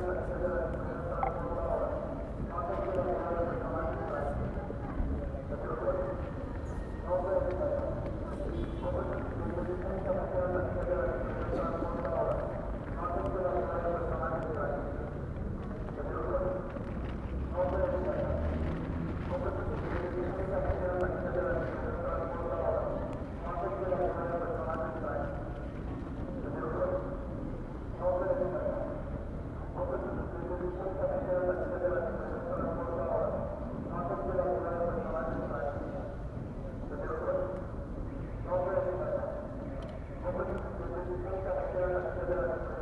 Ciudad Salitre, Bogotá, Colombia - Terminal de transporte Bogotá
Señor usuario no se deje engañar compre su tiquete......